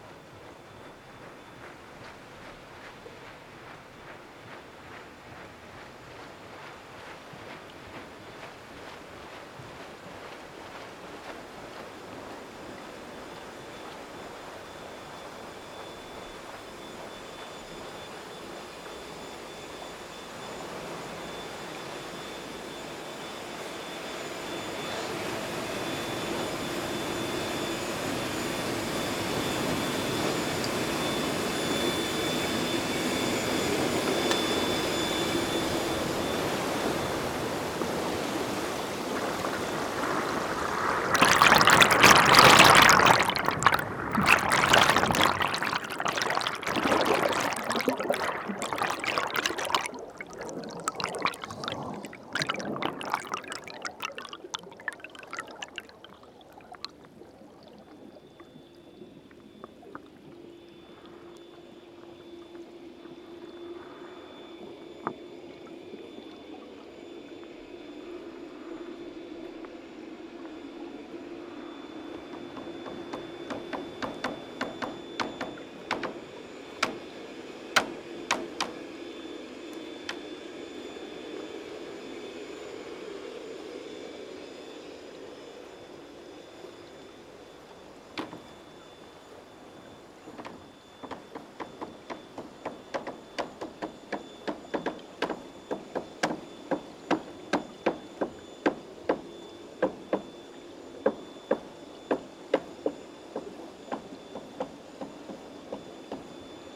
Meggenhorn, Schweiz - Raddampfer
Anlegen eines Raddampfers am Steg.
Juni 2001
Tascam DA-P1 / 1. Kanal: TLM 103, 2.